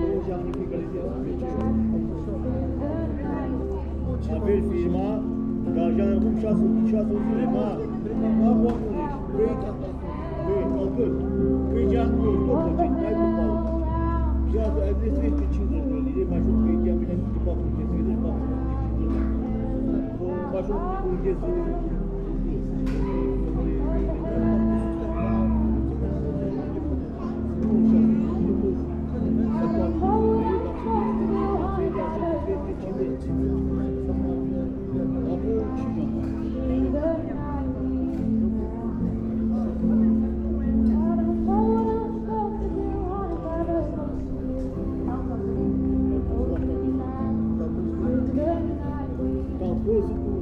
Girl Singer, HIgh Street, Worcester, UK
Street sounds then a girl singer on the other side of the road performs over conversations and other random noise. Another experiment with long recordings.
MixPre 6 II with 2 Sennheiser MKH 8020s.